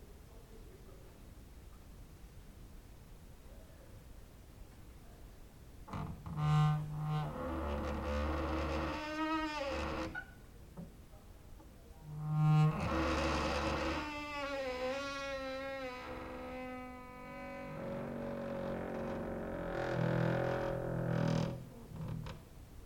{"title": "Mladinska, Maribor, Slovenia - late night creaky lullaby for cricket/17", "date": "2012-08-26 23:02:00", "description": "no cricket at that day ... strong wind outside, exercising creaking with wooden doors inside", "latitude": "46.56", "longitude": "15.65", "altitude": "285", "timezone": "Europe/Ljubljana"}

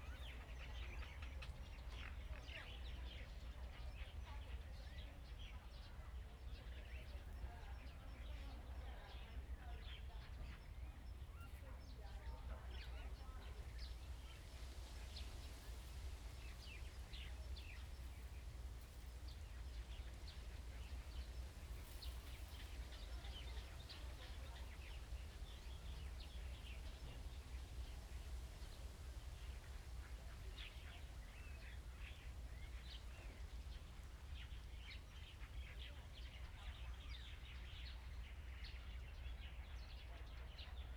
{"title": "Lieyu Township, Kinmen County - Birds singing", "date": "2014-11-04 11:19:00", "description": "Birds singing\nZoom H2n MS +XY", "latitude": "24.42", "longitude": "118.22", "altitude": "5", "timezone": "Asia/Shanghai"}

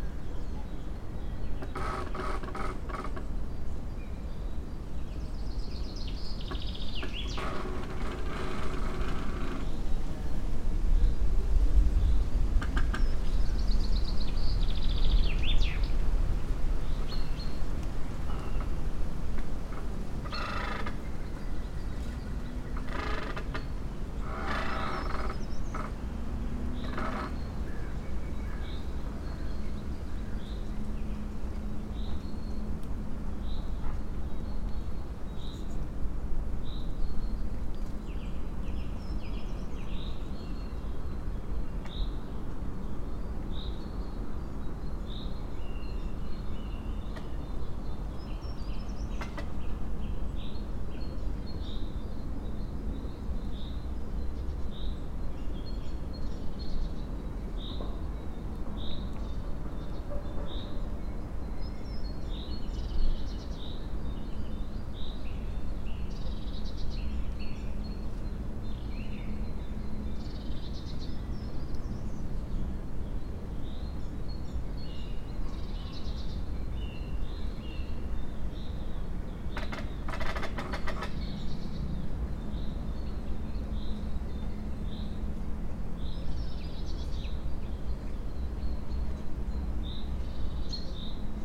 {"title": "fallen tree, Piramida, Slovenia - creaking tree", "date": "2013-04-24 17:09:00", "description": "spring breeze through tree crowns and light green soft, still furry leaves, fallen tree caught by another one, birds ...", "latitude": "46.58", "longitude": "15.65", "altitude": "365", "timezone": "Europe/Ljubljana"}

